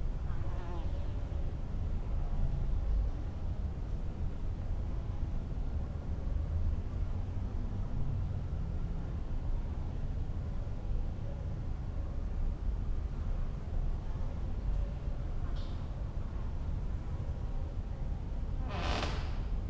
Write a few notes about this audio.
friday evening, sea gulls, flies ...